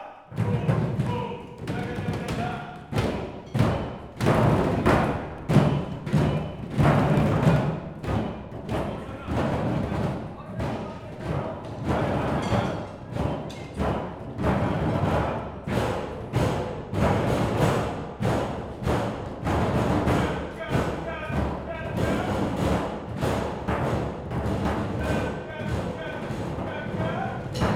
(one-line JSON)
{"title": "São Miguel-Azores-Portugal, Rabo de Peixe, percussion workshop-Michael Wimberly", "date": "2010-10-30 18:40:00", "latitude": "37.82", "longitude": "-25.58", "altitude": "6", "timezone": "Atlantic/Azores"}